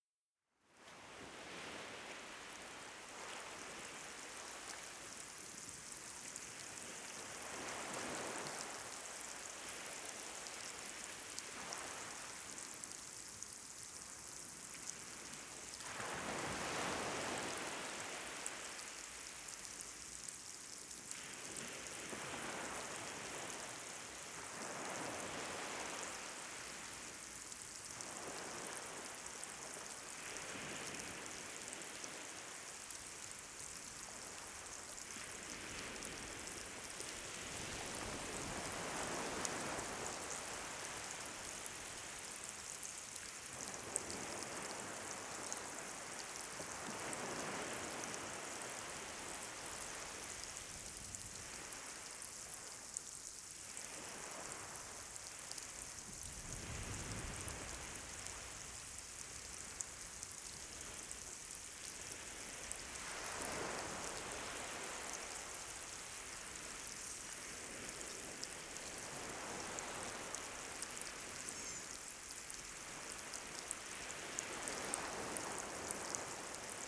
Berck, France - Berck - Plage
Berck - Plage
Avant la marée haute - des bulles d'air crépitent à la surface.